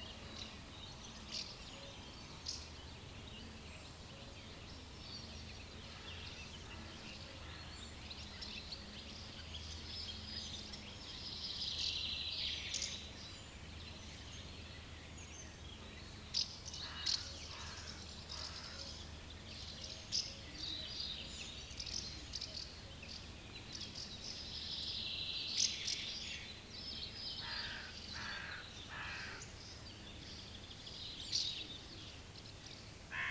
Ala village, summer morning in schoolyard
swallows and other birds